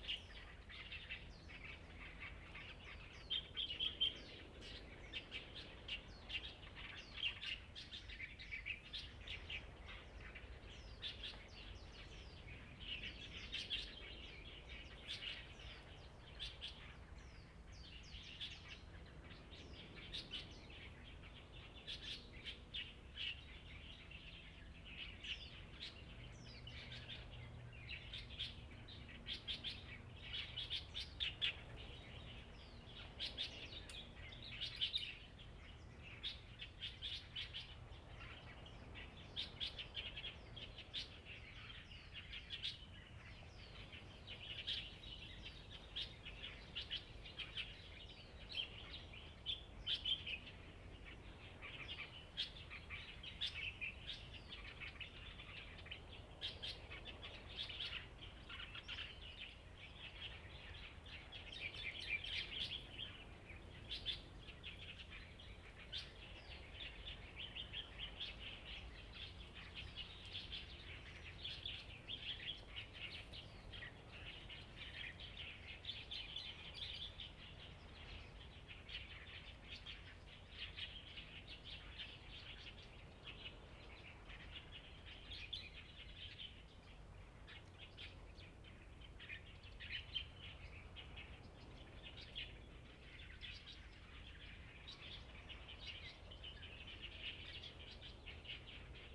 Birds singing, sound of the waves, In the park, In the woods
Zoom H2n MS +XY
Penghu County, Husi Township, 澎20鄉道, 21 October 2014, 08:39